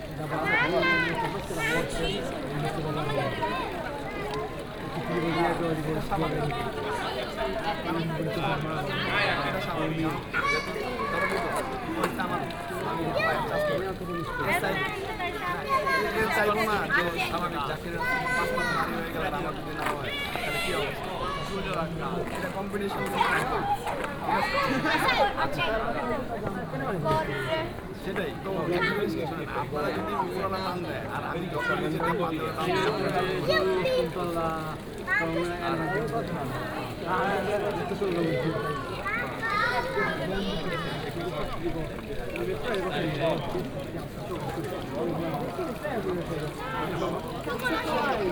taormina, piazza duomo - fountain, evening
people sitting near the fountain at piazza duomo in the evening.
Taormina ME, Italy, 25 October 2009, 19:50